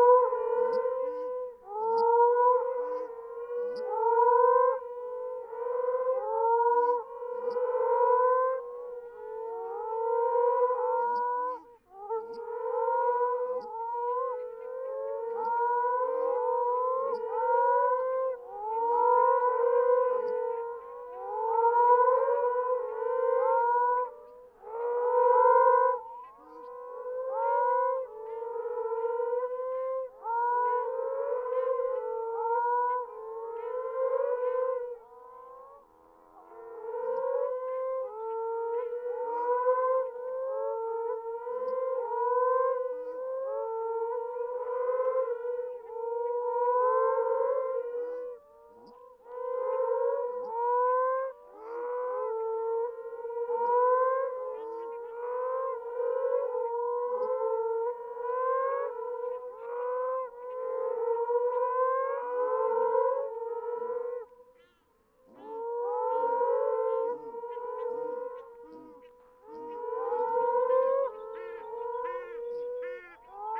Moaning Frogs calling from burrows in the ground. Shelducks calling from nearby lake, on a calm and warm night. Recorded with a Sound Devices 702 field recorder and a modified Crown - SASS setup incorporating two Sennheiser mkh 20 microphones.
Western Australia, Australia, 3 May 2012